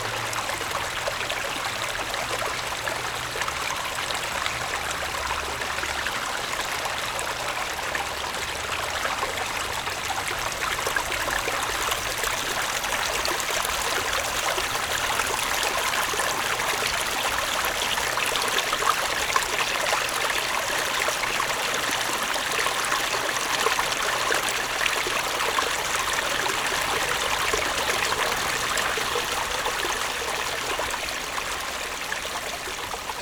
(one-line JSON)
{"title": "Yongxing Rd., Xindian Dist., New Taipei City - Water sound", "date": "2012-02-21 14:47:00", "description": "Water sound\nZoom H4n+Rode NT4", "latitude": "24.94", "longitude": "121.54", "altitude": "49", "timezone": "Asia/Taipei"}